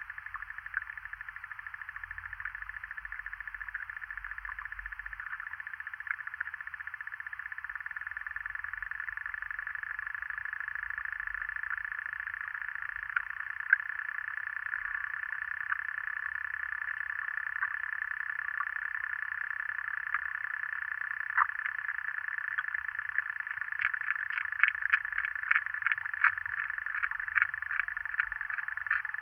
Kulionys, Lithuania, underwater
hydrophone. some low drone - probably from the wooden bridge vibrating in the wind...
Utenos apskritis, Lietuva, August 2019